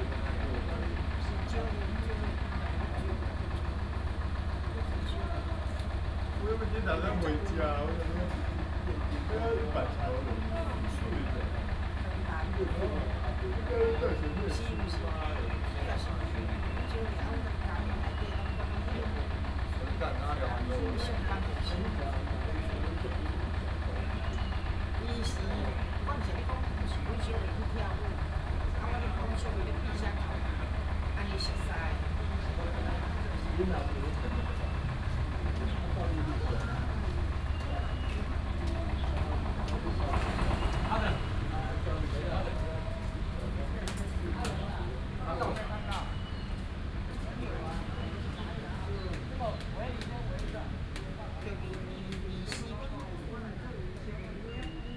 in the Park, Construction noise, Elderly chatting, Student